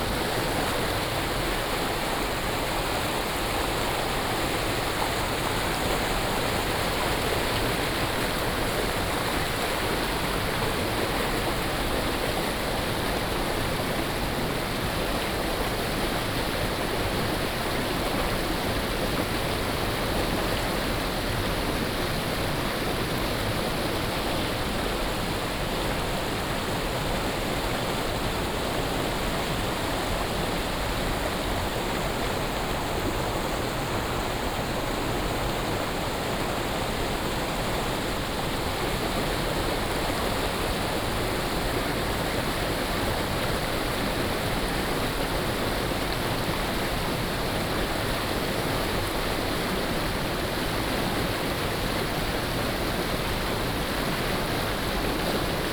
種瓜坑溪, 埔里鎮成功里 - Stream
Stream sound
Binaural recordings
Sony PCM D100+ Soundman OKM II
Puli Township, Nantou County, Taiwan, 19 April